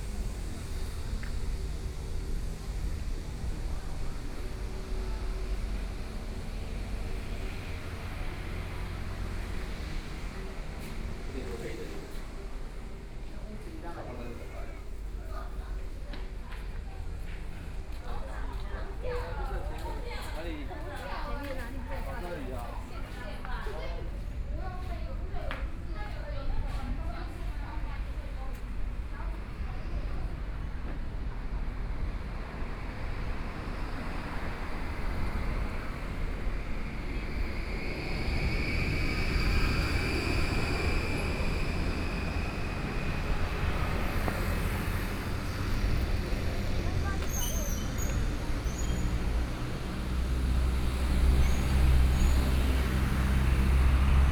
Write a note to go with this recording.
walking in the Street, Very hot weather, Many tourists, Traffic Sound, Sony PCM D50+ Soundman OKM II